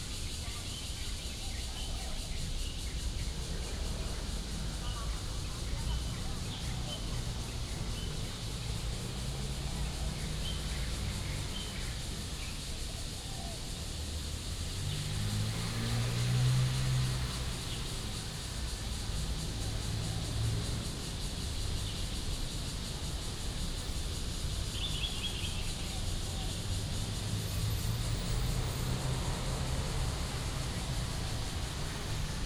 Xinlong Park, Da'an Dist. - Cicadas and Birds
in the Park, Cicadas cry, Bird calls, Traffic Sound